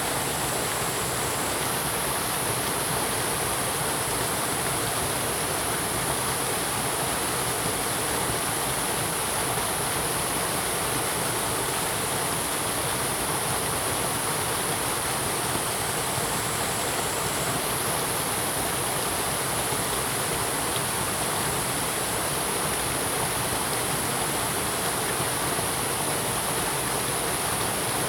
茅埔坑溪, 南投縣埔里鎮桃米里 - sound of water streams
sound of water streams, In Wetland Park
Zoom H2n MS+XY
Puli Township, 桃米巷11-3號, 11 August, 06:49